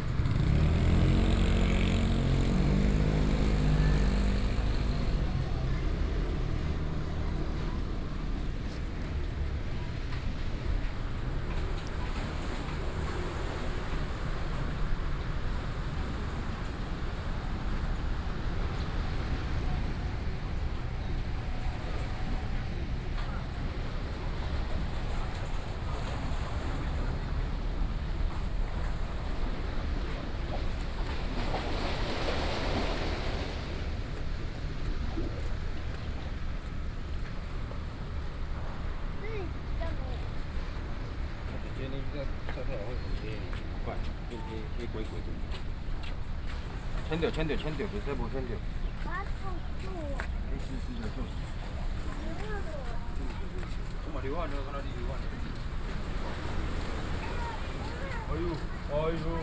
野柳地質公園, Wanli District - Next to the dike
Next to the dike, Sound of the waves, Consumers slope block
Sony PCM D50+ Soundman OKM II
Wanli District, New Taipei City, Taiwan, 25 June